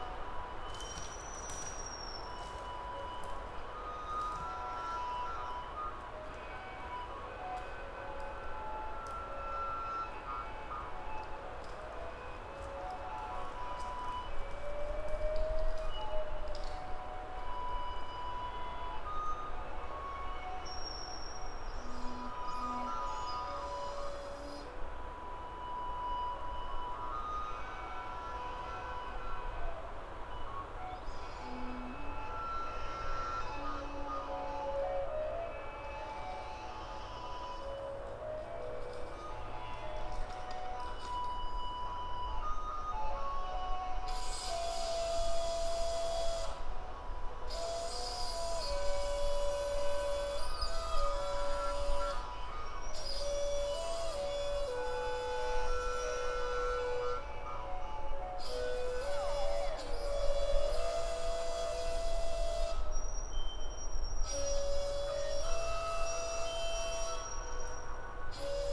cryptomeria session in the forest at puy lepine - KODAMA session
session recorded by KODAMA during residency at APPELBOOM, September 2009